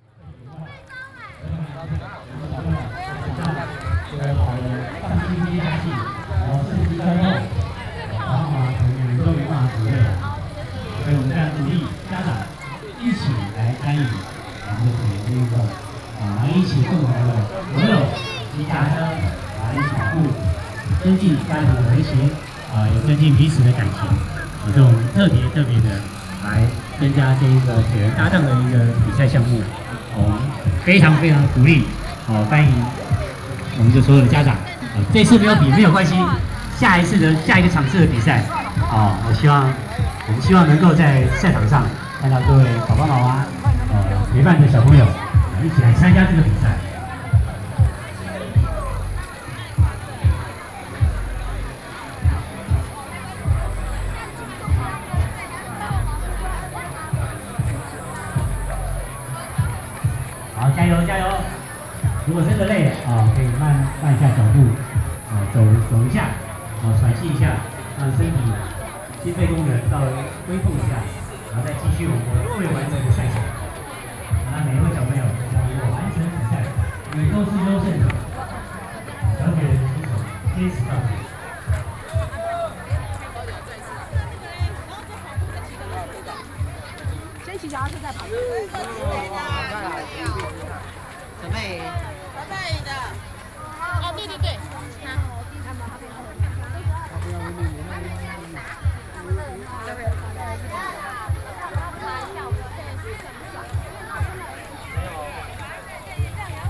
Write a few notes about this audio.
Triathlon, Children's sports competitions, Sony PCM D50, Binaural recordings